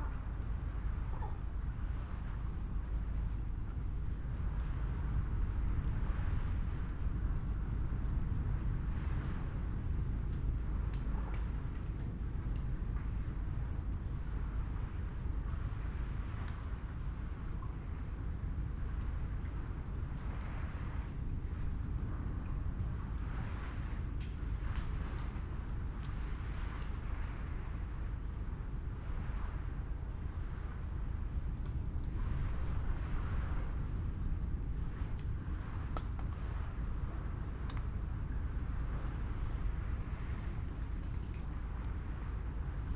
Caqalai Island, Lomaiviti, Fidschi - Caqalai Island in the evening
Soundscape of the late evening on Caqalai Island (pronounced Thangalai). Off season. About 10 people on the island and them either already asleep or being quiet. Sound of waves from nearby beach. Click and Crack sounds from twigs and branches. Various Insects. The squeaky and croaky calls from the canopy are from Pacific Reef Herons (Egretta sacra) at their night time or high-tide roost. Dummy head microphone placed in an area covered with trees and lush undergrowth. Mic facing south west. Recorded with a Sound Devices 702 field recorder and a modified Crown - SASS setup incorporating two Sennheiser mkh 20 microphones.
June 2012